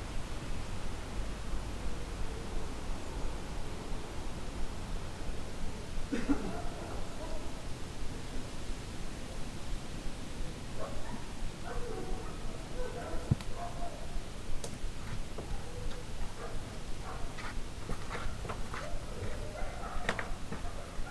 Lipa, Lipa, Polska - Ruiny Zamku w Lipie - Dźwięk zastygły w czasie.
Projekt „Dźwięk zastygły w czasie” jest twórczym poszukiwaniem w muzyce narzędzi do wydobycia i zmaterializowania dźwięku zaklętego w historii, krajobrazie, architekturze piastowskich zamków Dolnego Śląska.Projekt dofinansowany ze środków Ministerstwa Kultury i Dziedzictwa Narodowego.